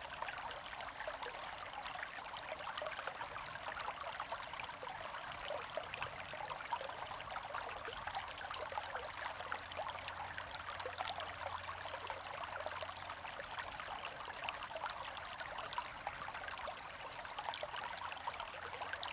Sound of water, Small streams
Zoom H2n MS+XY